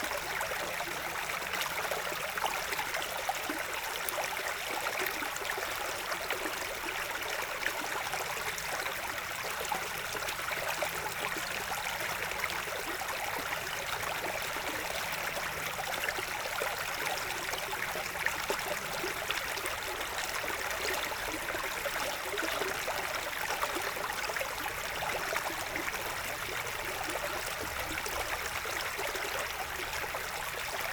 Chaumont-Gistoux, Belgique - The Train river
The train river, a small stream in the wood, recorded in a very bucolic landscape.